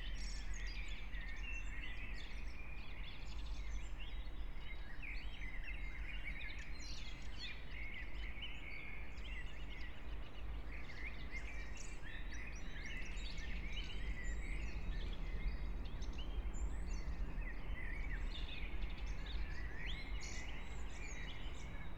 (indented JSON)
{
  "title": "Brno, Lužánky - park ambience",
  "date": "2021-04-14 04:30:00",
  "description": "04:30 Brno, Lužánky park\nSoundscapes of the Anthropocene\n(remote microphone: AOM5024/ IQAudio/ RasPi2)",
  "latitude": "49.20",
  "longitude": "16.61",
  "altitude": "213",
  "timezone": "Europe/Prague"
}